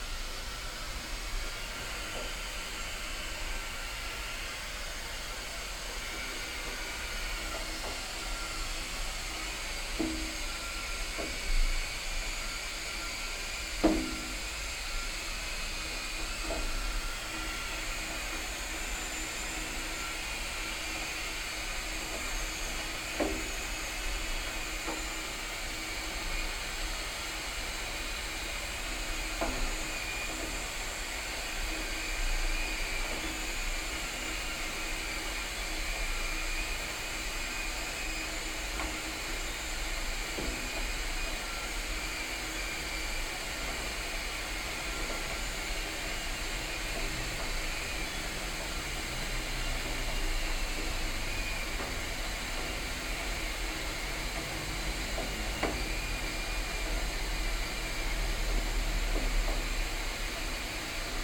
audresseles, rose des vents, wasserkocher
wasserkocher auf gasherd
fieldrecordings international: social ambiences/ listen to the people - in & outdoor nearfield recordings